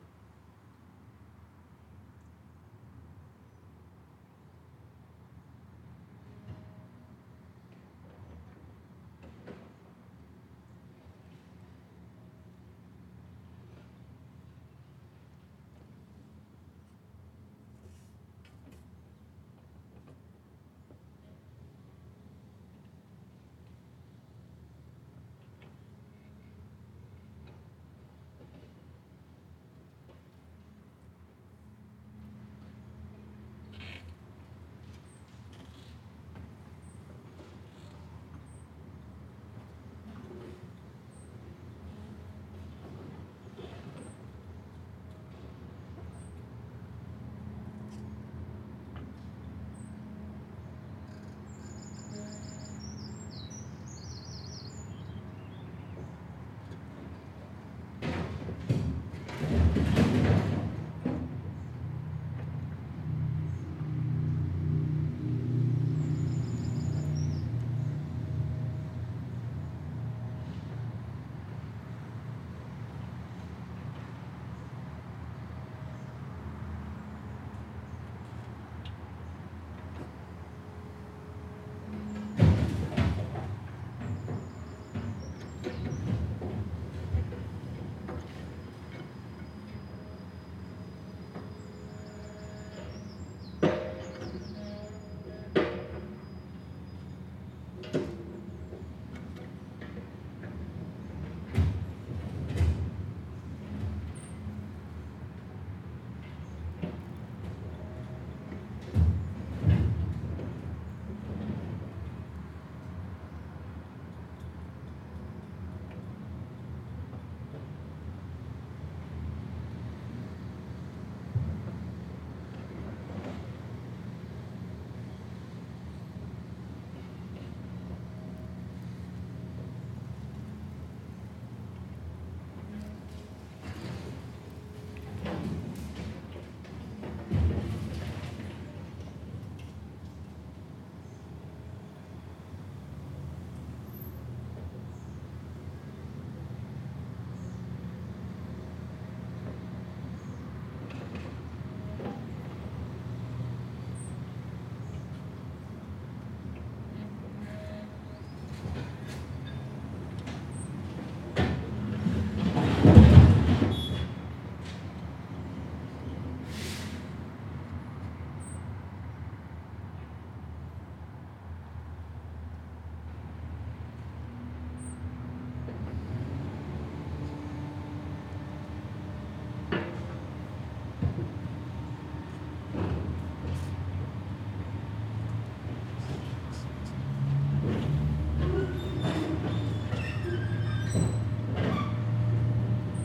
Gillette Building, Reading, Reading, Reading, UK - Loading rubble and bits of old building into a lorry
This recording was made sitting a small distance from the closed-off site wherein the old pink Gillette factory building is currently being demolished. You can hear the busy traffic on the Basingstoke Road, the dry leaves scuttling over the tarmac, and the huge lorry and machinery within the building site moving around. The big bangs are the sounds of large pieces of the building going into the truck to be taken for recycling or landfill. The lorry reverses towards the end of the recording. The levels are very low because the sounds of destruction were super loud. Every time bits of wall were dropped into the skip, the ground shook.
10 June 2015